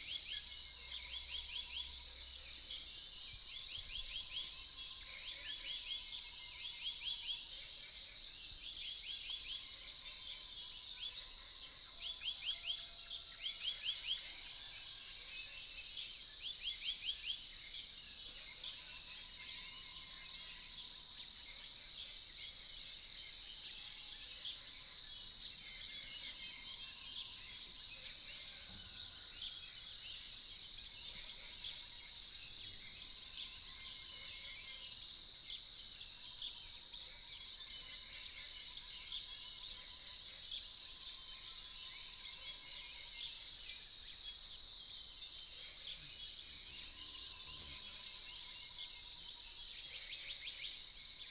種瓜路4-2號, TaoMi Li, Puli Township - Early morning
Birdsong, Chicken sounds, Frogs chirping, Early morning